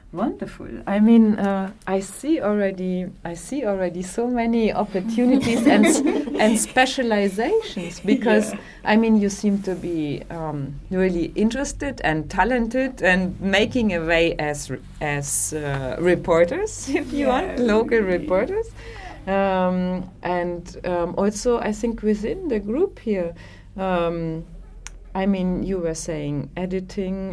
Radio Wezhira, Masvingo, Zimbabwe - Studio workshop conversation...
The recording pictures part of a workshop meeting with four of the young women members of the studio team. Sharon Mpepu, Thabeth Gandire, Chiedza Musedza, and Ivy Chitengedza are coming to the community radio and studio practice from varied professional backgrounds, but now they are forming an enthusiastic team of local journalists. Chiedza, who already came from media practice to the community radio, begins describing a situation they recently faced while gathering information in town… the others join in…